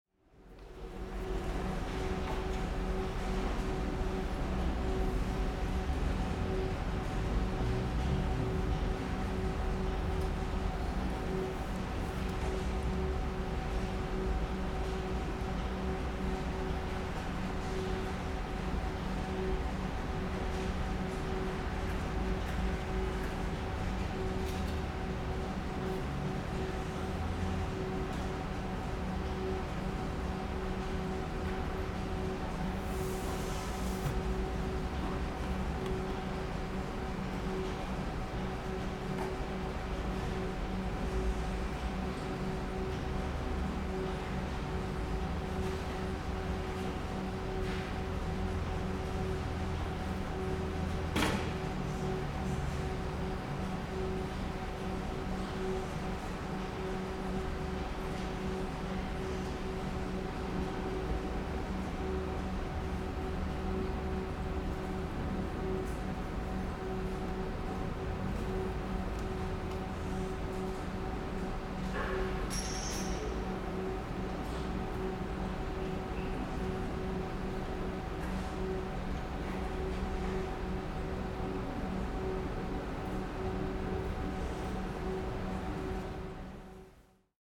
ITÜ Architechture bldg survey, Computer Lab
sonic survey of 18 spaces in the Istanbul Technical University Architecture Faculty
March 2010